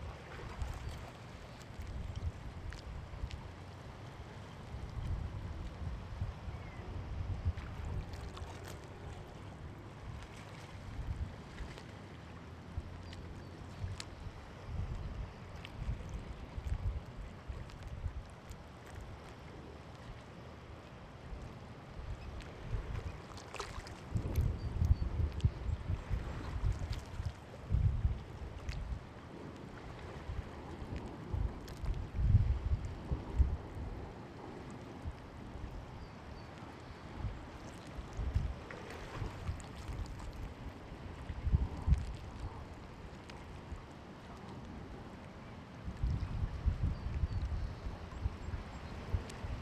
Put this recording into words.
sound of creek mixing with waves of San Francisco Bay.. As much as I have got excited about discovery of crawfish in a creek at the campus, I have got equally sad about how much the place where Strawberry creek meets a SF Bay is polluted. Once marsh with willow patch and shellmound, now concrete tube with enourmes amount of plastic debris all over.. Efforts are made to clean and restore this area, lets hope and thank to anyone who helps with it